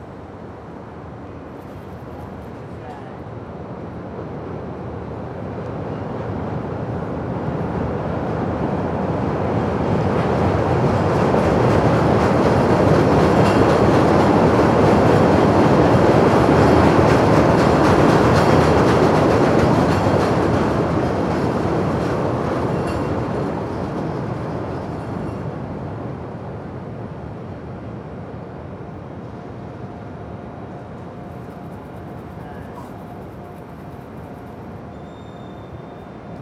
Elevated trains and panhandler on Wabash Avenue in Chicago
trains, panhandling, Chicago
11 January 2012, 18:22